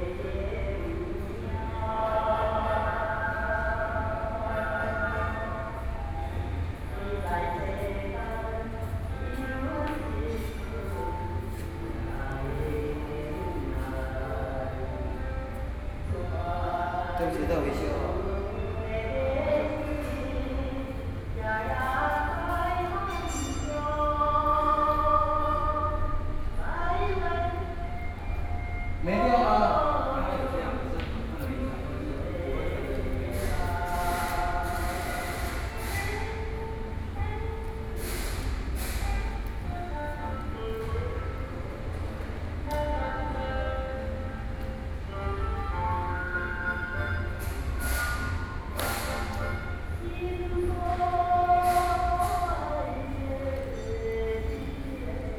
{"title": "Tina Keng Gallery, Neihu, Taipei City - inside the gallery", "date": "2013-07-09 16:38:00", "description": "In the gallery, Workers are repairing the door, Artists are repairing his artworks, Sony PCM D50 + Soundman OKM II", "latitude": "25.08", "longitude": "121.57", "altitude": "10", "timezone": "Asia/Taipei"}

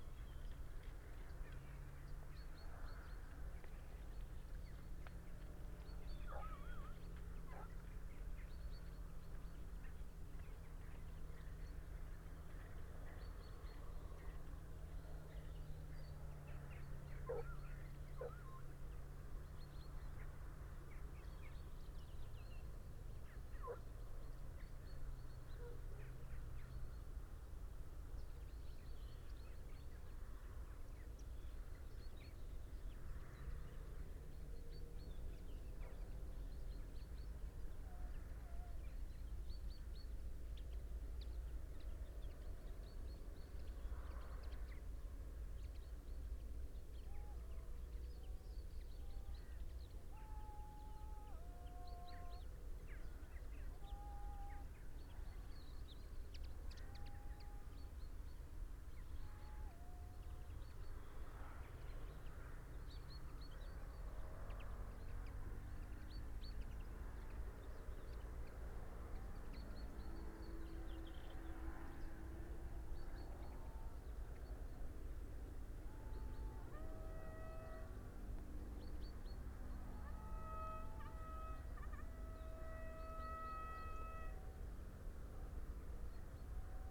{"title": "urchins wood, ryedale district ... - horses and hounds ...", "date": "2019-09-30 07:49:00", "description": "horses and hounds ... opportunistic recording with parabolic ...", "latitude": "54.12", "longitude": "-0.56", "altitude": "118", "timezone": "Europe/London"}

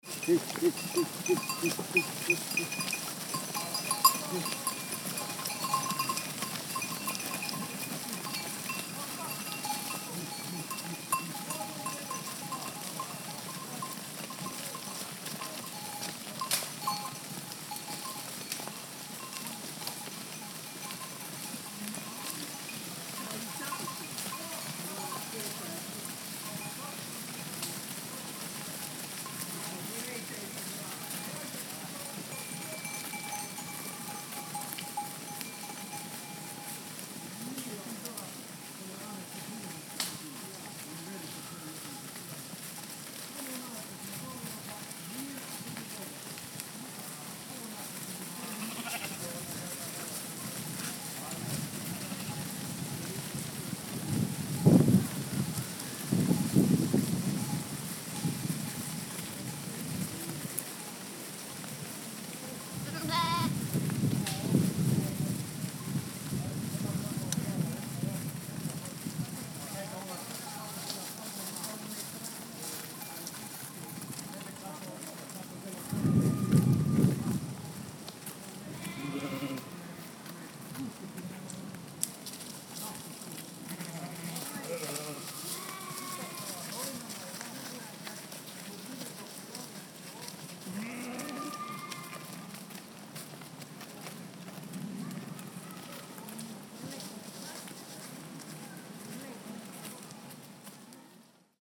{"title": "Platak, Platak, flock of sheep", "date": "2008-07-20 19:38:00", "description": "Flock of sheep approaching and passes by me, Platak mountain region @1111m above sea level.", "latitude": "45.43", "longitude": "14.56", "altitude": "1111", "timezone": "Europe/Zagreb"}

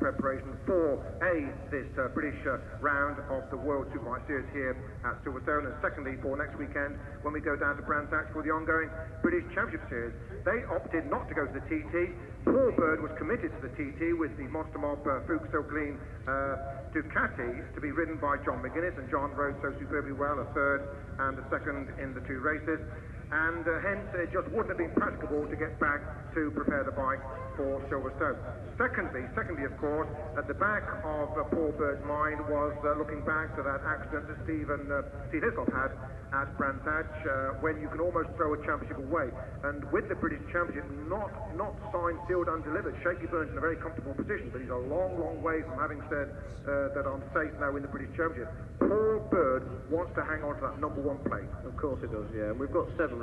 {"title": "Silverstone Circuit, Towcester, United Kingdom - World Superbikes 2003 ... Super Pole", "date": "2003-06-14 16:00:00", "description": "World Superbikes 2003 ... Super Pole ... one point stereo mic to minidisk ...", "latitude": "52.07", "longitude": "-1.02", "altitude": "152", "timezone": "Europe/London"}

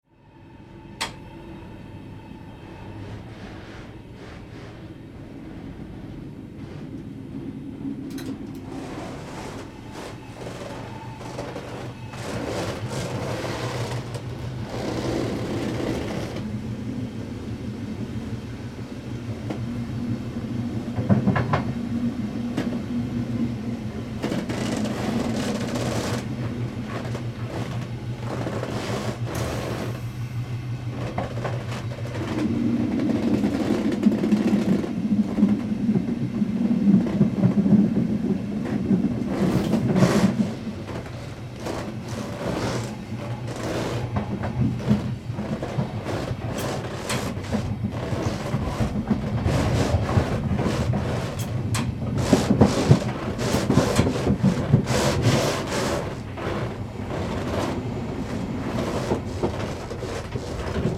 {"title": "hagen, replacement train - train stops", "date": "2009-01-07 22:57:00", "description": "07.01.2009 22:57 train stops, heavy noise at the elastic connection between the two wagons.", "latitude": "51.41", "longitude": "7.46", "altitude": "102", "timezone": "Europe/Berlin"}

